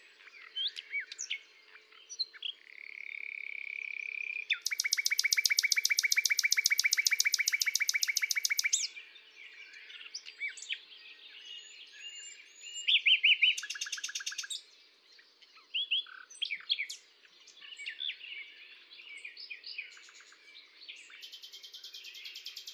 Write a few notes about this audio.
Lac de Morgnieu, montage sonore, Tascam DAP-1 Micro Télingua, Samplitude 5.1